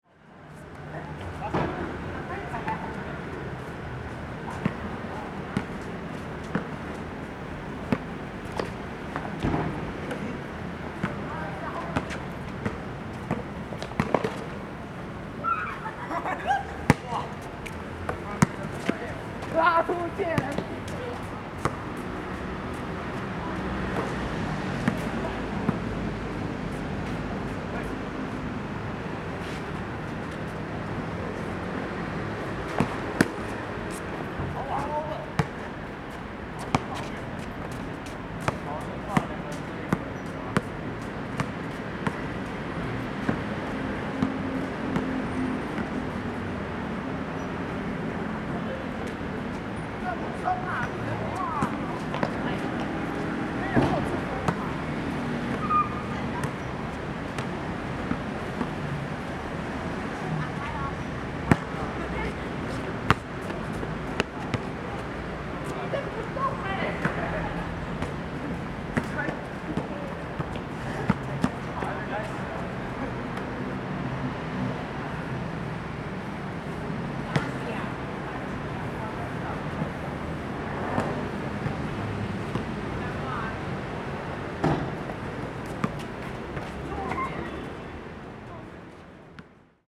Minle Rd., Zhonghe Dist., New Taipei City - Small basketball court
Small basketball court
Sony Hi-MD MZ-RH1 +Sony ECM-MS907